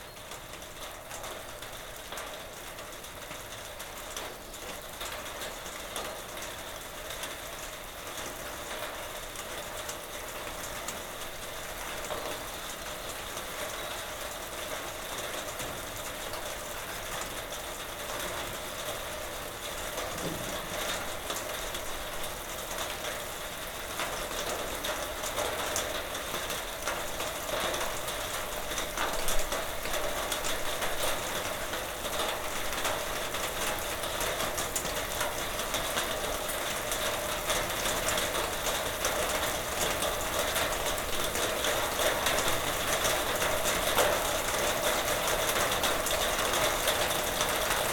Westergate, Woodgate, Chichester, UK - Sleet on garage door
Recorded early evening using my Zoom H5. No special technique - garage door was open and I balanced the recorded on the door itself
3 March 2019